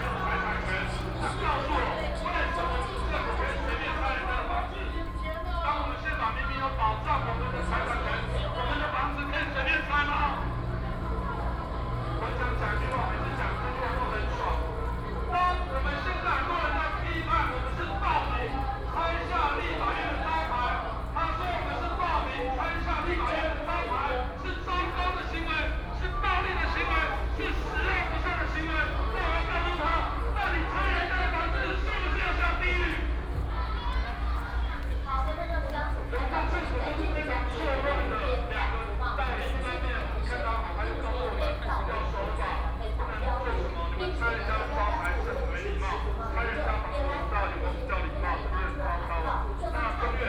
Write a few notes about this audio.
Walking through the site in protest, People and students occupied the Legislature Yuan